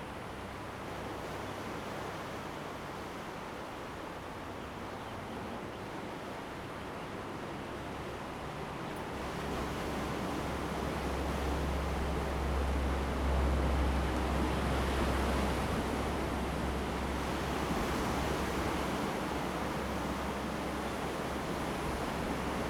sound of the waves, At the seaside, Standing on the rocky shore
Zoom H2n MS+XY